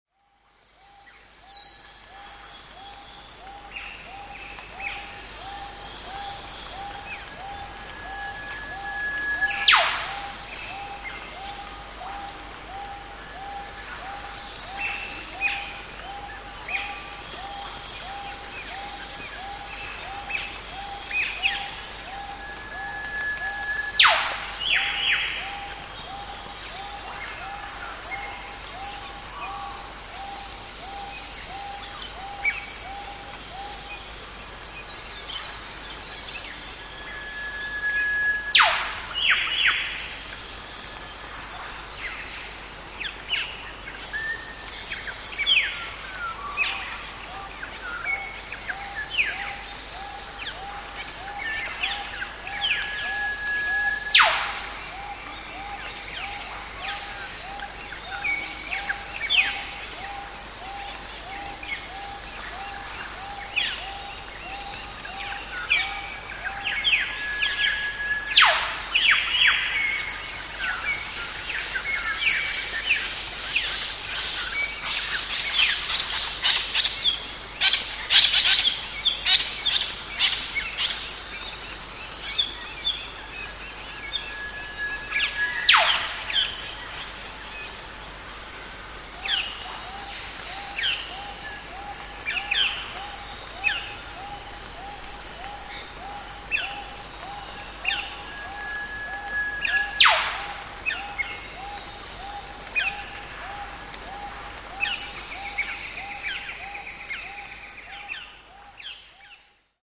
Jerusalem National Park, Northern Rivers, Australia, Dawn Chorus: whip birds
A recording of whip birds as the sun rises through the dense foliage of Jerusalem National Park